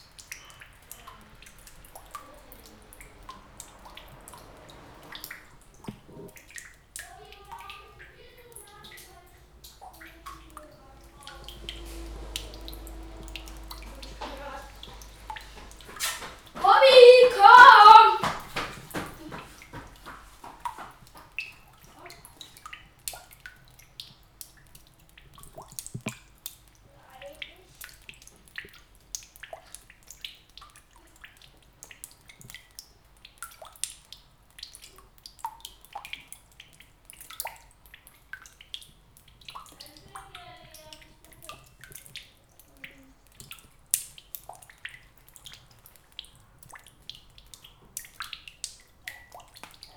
Hoetmar, Germany - Sounds of a new home...
... sounds of a home, "near to the soil"... that remains a project and a building site...